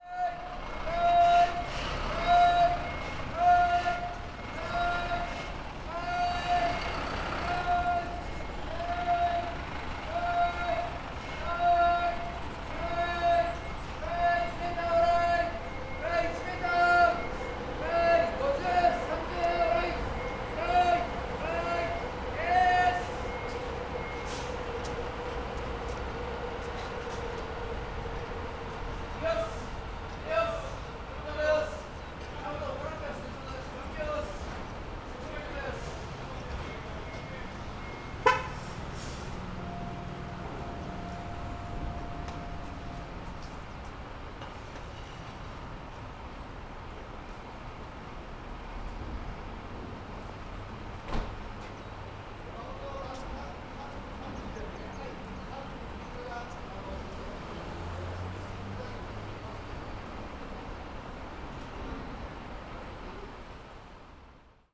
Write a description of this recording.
fire fighters parking their truck, directing it into garage, checking lights, horn, etc.